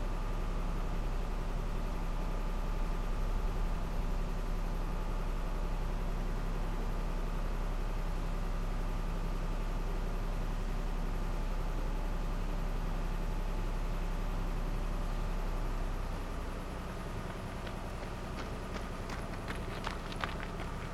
Berlin, Stralau - transport ship engine
transport ship diesel engine ideling at the river bank. joggers and bikers.
Berlin, Deutschland